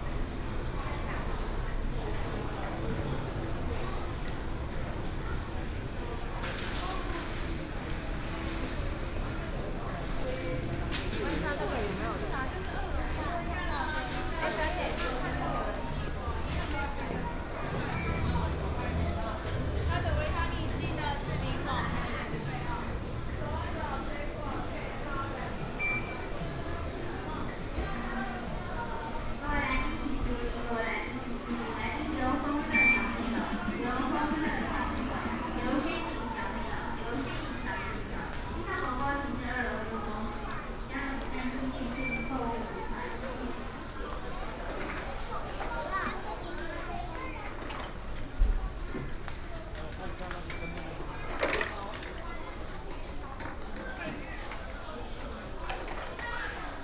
家樂福Carrefour, Tamsui Dist., New Taipei City - walking in the Discount store
walking in the Discount store